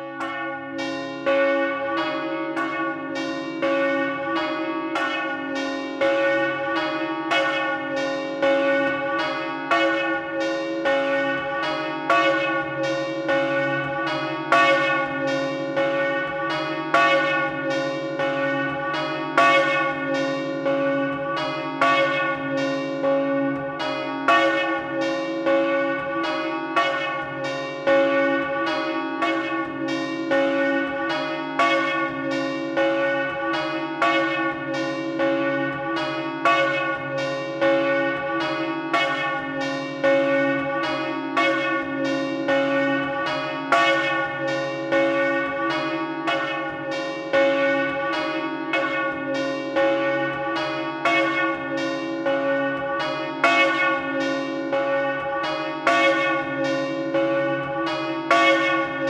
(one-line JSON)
{"title": "Rue de l'Église, Longny les Villages, France - Longny-au-Perche au Perche - Église St-Martin", "date": "2020-02-20 10:30:00", "description": "Longny-au-Perche au Perche (Orne)\nÉglise St-Martin\nLe Glas", "latitude": "48.53", "longitude": "0.75", "altitude": "169", "timezone": "Europe/Paris"}